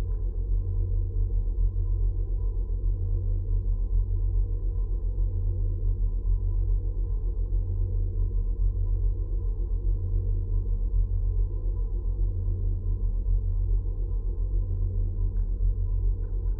{"title": "Kupiskis, long rope drone", "date": "2017-06-24 13:20:00", "description": "contact microphones on long iron rope", "latitude": "55.85", "longitude": "24.98", "altitude": "76", "timezone": "Europe/Vilnius"}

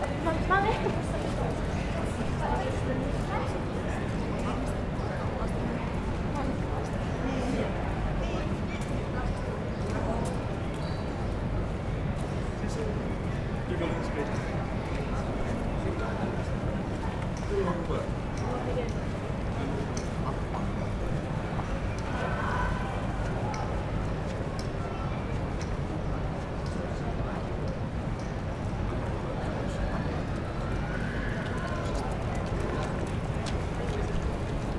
{"title": "Kauppurienkatu, Oulu, Finland - Friday evening at the centre of Oulu", "date": "2020-06-12 18:39:00", "description": "One of the busiest pedestrian intersections in Oulu during a warm summer evening. Lots of happy people going by as it's friday. Zoom h5, default X/Y module.", "latitude": "65.01", "longitude": "25.47", "altitude": "15", "timezone": "Europe/Helsinki"}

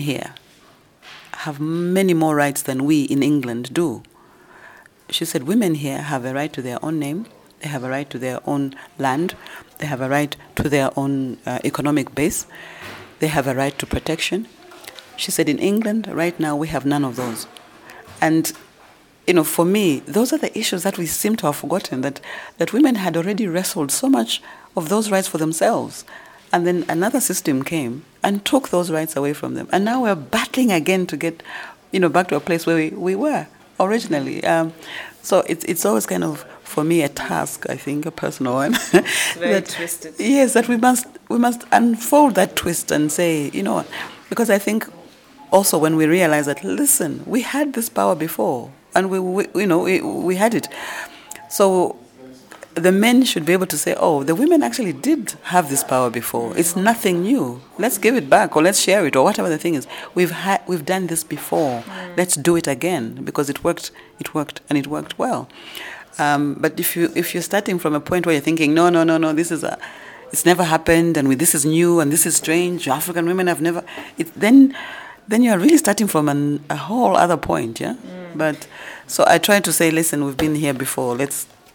{"title": "National Arts Council Offices, Luneta, Lusaka, Zambia - Mulenga Kapwepwe raises an African flag of women’s power...", "date": "2012-11-27 09:20:00", "description": "The recording with Mulenga Kapwepwe took place in the busy offices of the National Arts Council of Zambia in Lusaka, which underscores Mulenga’s stories with a vivid soundtrack; even the Lusaka-Livingstone train comes in at a poignant moment. The interview is a lucky opportunity to listen to Mulenga, the artist, poet, author, researcher, playwright and storyteller she is. She offers us an audio-tour through a number of her stage productions, their cultural backgrounds and underlying research.\nMulenga Kapwepwe is the chairperson of the National Arts Council Zambia (NAC), sits on numerous government and international advisory panels, and is the Patron of a number of national arts and women organisations.", "latitude": "-15.41", "longitude": "28.28", "altitude": "1278", "timezone": "Africa/Lusaka"}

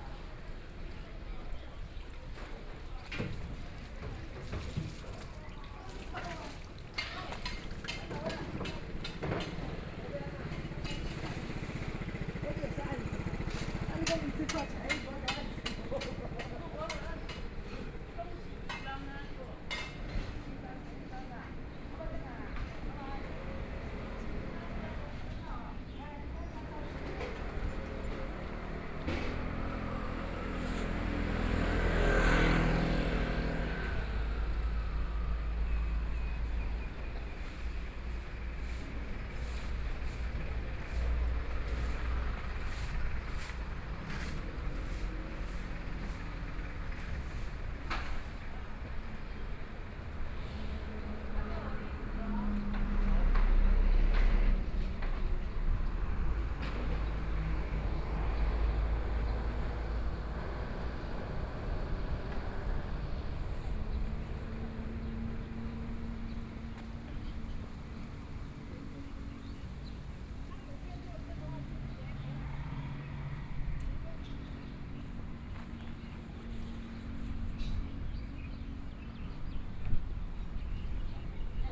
{"title": "Mingyi St., Hualien City - Traditional Market", "date": "2013-11-05 12:32:00", "description": "The market is ready for a break finishing cleaning, Binaural recordings, Sony PCM D50+ Soundman OKM II", "latitude": "23.97", "longitude": "121.61", "altitude": "8", "timezone": "Asia/Taipei"}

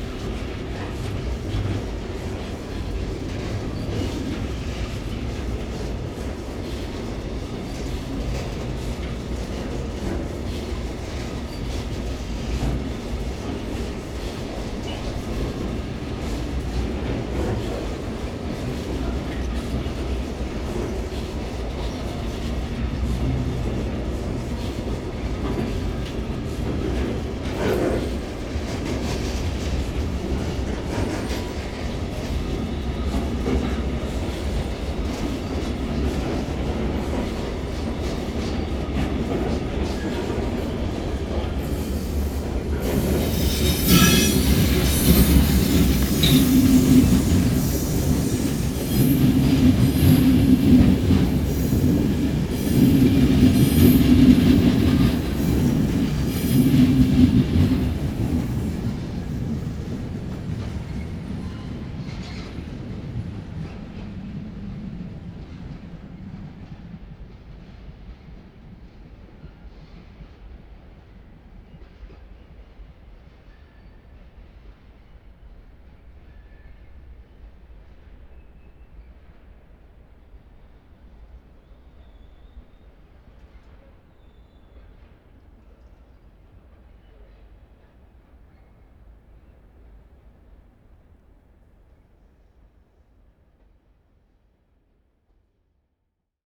{"title": "Dobšinského, Bratislava, Slovakia - Trains at Bratislava Main Station", "date": "2021-03-16 22:29:00", "description": "Freight train and passenger train at Bratislava Main Station.", "latitude": "48.16", "longitude": "17.11", "altitude": "164", "timezone": "Europe/Bratislava"}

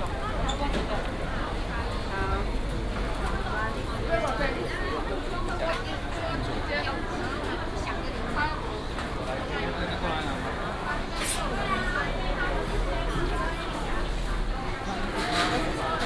{"title": "大隆路黃昏市場, Nantun Dist., Taichung City - Walking in the traditional market", "date": "2017-04-29 17:56:00", "description": "walking in the Evening market, Traffic sound", "latitude": "24.16", "longitude": "120.65", "altitude": "92", "timezone": "Asia/Taipei"}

